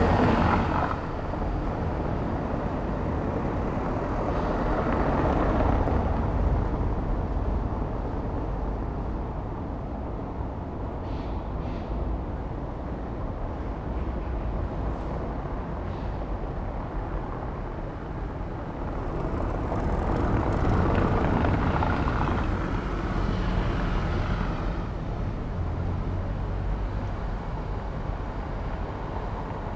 {
  "title": "Berlin: Vermessungspunkt Friedel- / Pflügerstraße - Klangvermessung Kreuzkölln ::: 08.10.2010 ::: 10:55",
  "date": "2010-10-08 10:55:00",
  "latitude": "52.49",
  "longitude": "13.43",
  "altitude": "40",
  "timezone": "Europe/Berlin"
}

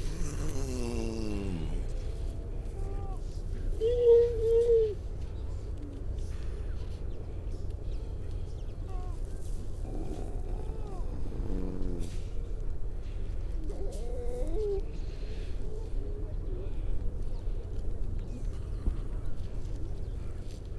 {
  "title": "Unnamed Road, Louth, UK - grey seals soundscape ...",
  "date": "2019-12-03 10:25:00",
  "description": "grey seals soundscape ... mainly females and pups ... parabolic ... bird calls from ... skylark ... chaffinch ... mipit ... starling ... linnet ... crow ... pied wagtail ... all sorts of background noise ...",
  "latitude": "53.48",
  "longitude": "0.15",
  "altitude": "1",
  "timezone": "Europe/London"
}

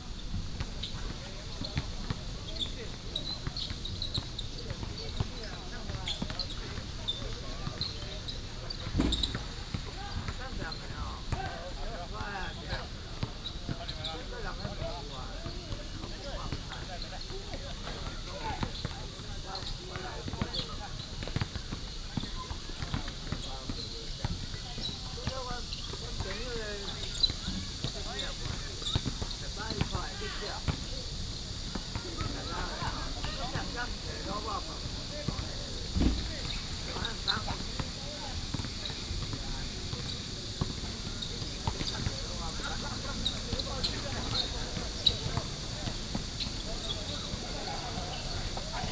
{
  "title": "陽明運動公園, Taoyuan City - play basketball",
  "date": "2017-07-15 19:00:00",
  "description": "Next to the basketball court, Cicada",
  "latitude": "24.98",
  "longitude": "121.31",
  "altitude": "108",
  "timezone": "Asia/Taipei"
}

